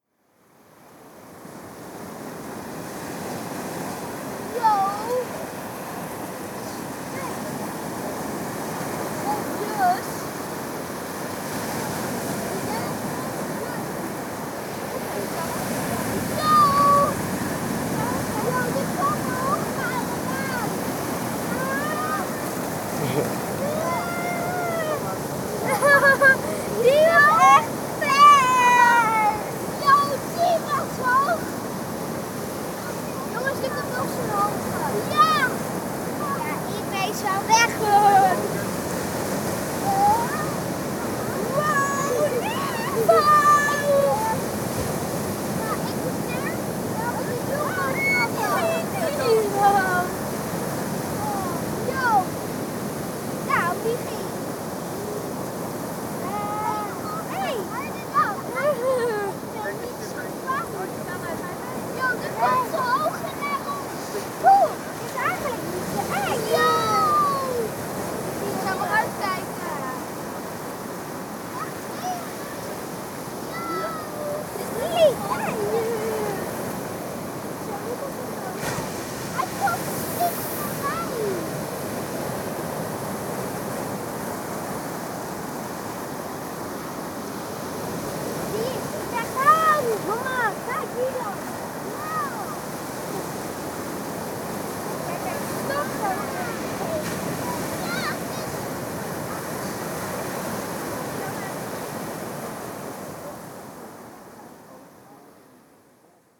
Scheveningen, beach, Lentezon
recorded at the beach on 21th of March 2010